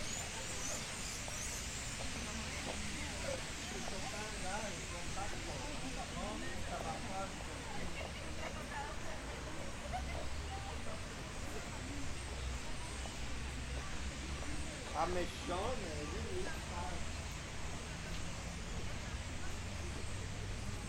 Ouchy Hafen, Starengesang
Ohrenbetäubender Starengesang am Hafen in Ouchy /Lausanne am Genfersee